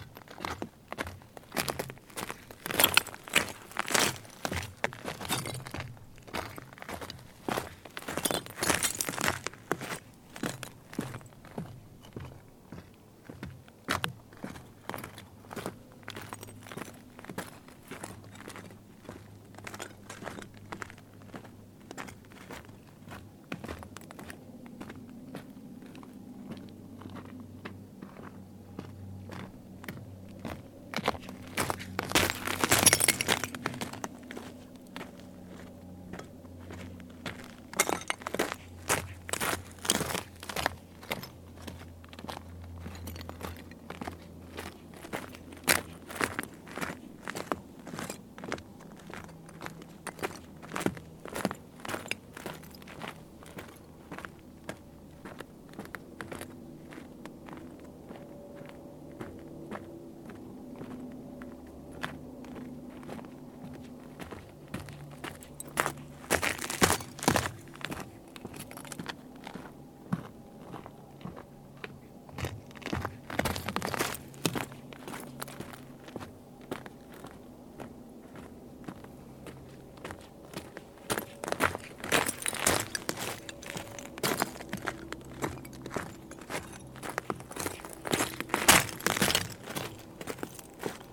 Bartlett, CA, USA - Walking on Owens Dry Lake
Metabolic Studio Sonic Division Archives:
Walking on dry salt flat of Owens Dry Lake. Recorded with Zoom H4N